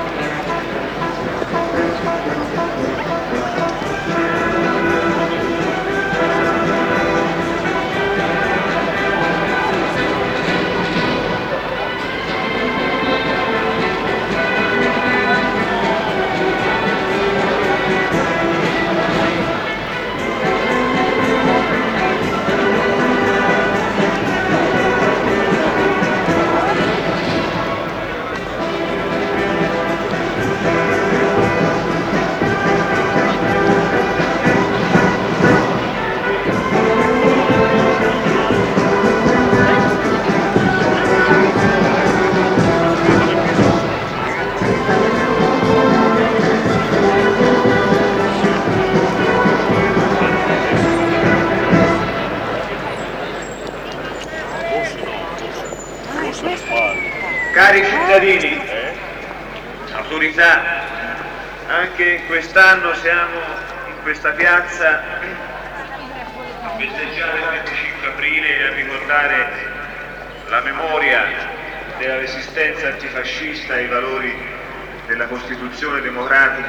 Piazza del Campo, Piazza Il Campo, Siena SI - Anniversario della Liberazione, holiday - glasses - music - speech
we reach the Campo, a huge semi-circular piazza in the center of the city. We sit down at the edge, in the vicinity of one of the many sidewalk cafes. Glasses are just cleaned. The place fills up gradually. In the middle a platform is built. / wir erreichen den Campo, einen riesigen halbrunden Platz in der Mitte der Stadt, der nach vorne hin schrägt abfällt. Wir setzen uns an den Rand, in die Nähe von einen der vielen Straßencafes. Gläser werden gerade geputzt. Der Platz füllt sich nach und nach. Vorne ist eine Tribüne aufgebaut.
Sony Walkman WM-D6C recording, digitilized with zoom h2